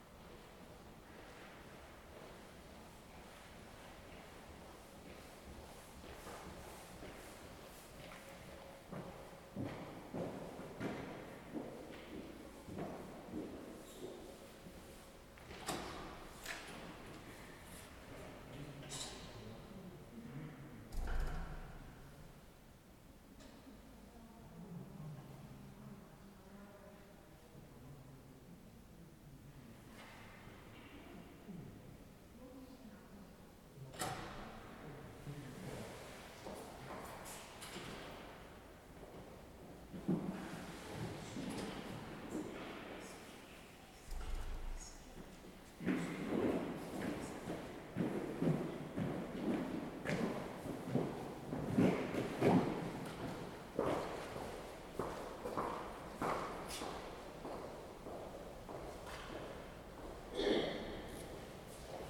Župné námestie, Bratislava-Staré Mesto, Slovakia - Kostel sv. Štefana Uhorského
Interiér kostela, zvenku jsou slyšet kluci na skejtbordech
Bratislava, Slovensko, 16 February 2022, 17:19